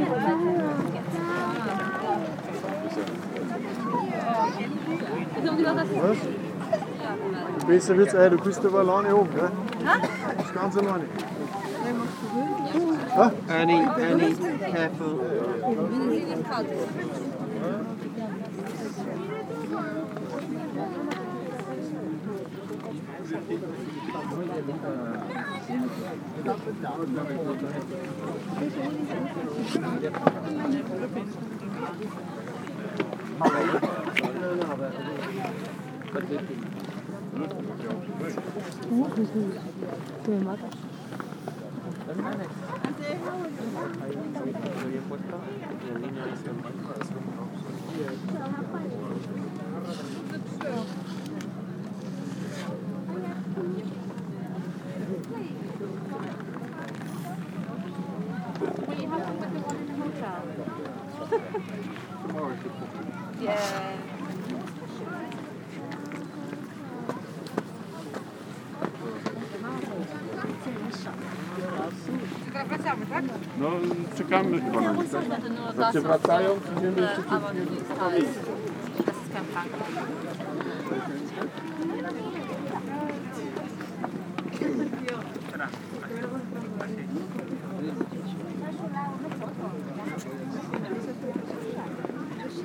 København, Denmark - The small mermaid
Posed on a rock, the little mairmaid is the symbol of the Copenhagen city. A lot of tourists are trying to make a selfie, while jostling themself unceremoniously. This is the daily nowadays tourism. A friend said me that Den Lille Havfrue (the name in Danish) is a tourist trap, but he said more : it's a black hole ! It was true.
15 April, 14:00